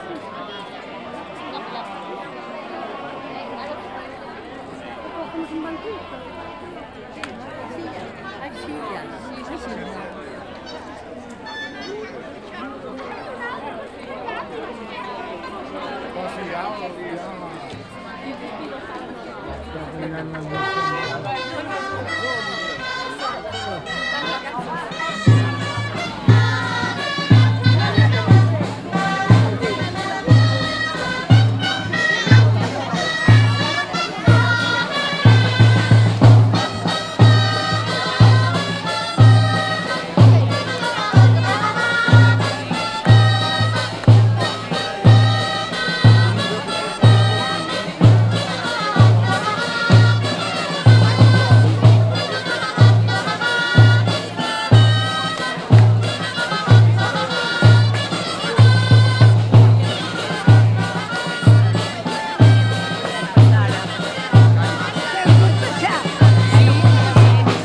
SBG, Plaça - Festa Major, Grallers y Gigantes
Dia de Festa Major en Sant Bartomeu. Los grallers acompañan a los gigantes desde la parroquia municipal hasta la Plaça del Casal.
St Bartomeu del Grau, Spain, 24 August